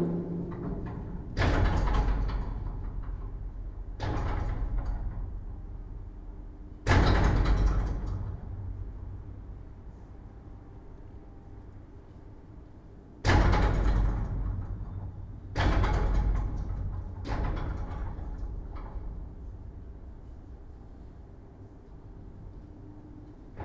Charleroi, Belgium - slamming door in an abandoned factory
In an abandoned coke plant, every landscape is extremely glaucous. Today its raining and theres a lot of wind. A semi-destroyed metallic door slams in the squall.